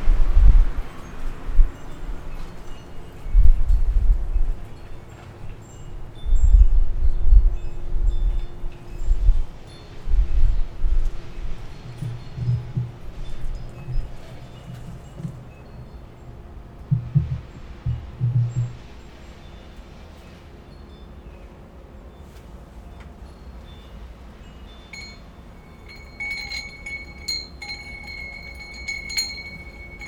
St. Richmond, CA, USA - Street Daily
I'm having the microphone pointed at the street and recorded some daily street sounds of the neighborhood.